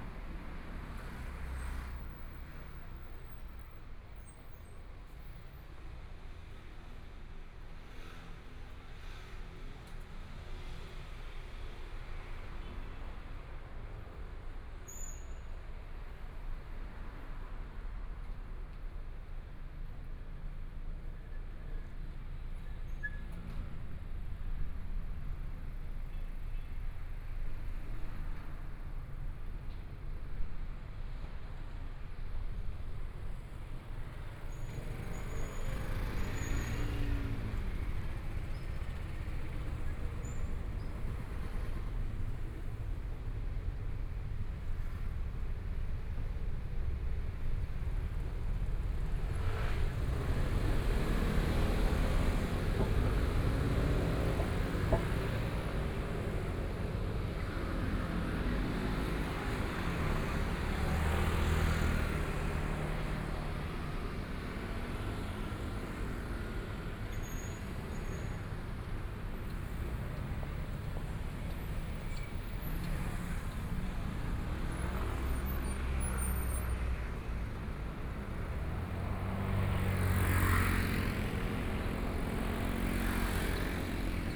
walking in the Nong'an St.., Traffic Sound, toward Songjiang Rd., Binaural recordings, Zoom H4n+ Soundman OKM II